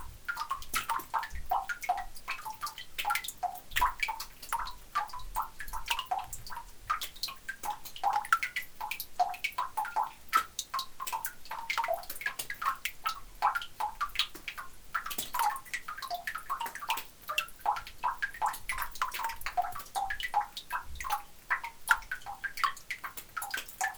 Arâches-la-Frasse, France - Coal mine
Drops falling onto the ground into an underground lignite mine. It's an especially dirty place as the coal is very dark and greasy.